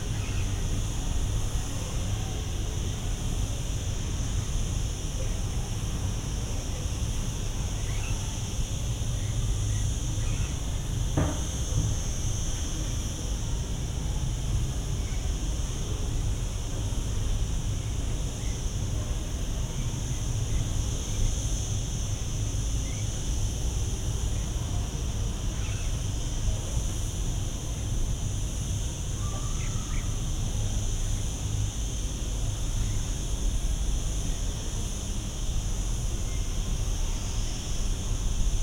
Blanca, Murcia, Spain - crickets and village sounds
crickets from the rocks nearby, village sounds and wind. recorded with olympus ls-14